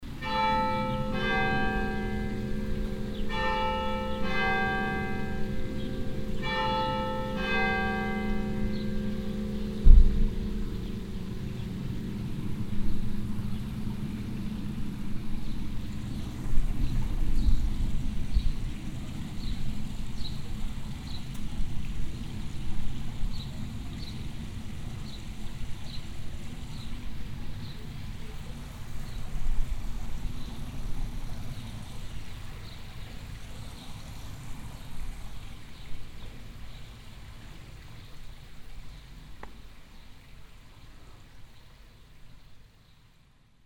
eschweiler, church, bell and fountain
Standing in front of the Saint-Maurice church. The Bell ringing a quarter to two. The overall ambience covered by a nearby private garden fountain.
Eschweiler, Kirche, Glocke und Brunnen
Vor der Kirche St. Mauritius stehend. Die Glocke läutet Viertel vor Zwei. Die allgemeine Umgebung mit einem privaten Gartenspringbrunnen.
Eschweiler, église, cloche et fontaine
Debout devant l’église Saint-Maurice. Les cloches sonnent deux heures moins le quart. L’atmosphère générale dans les environs avec le jet d’eau d’une fontaine dans un jardin privé
Project - Klangraum Our - topographic field recordings, sound objects and social ambiences
Eschweiler, Luxembourg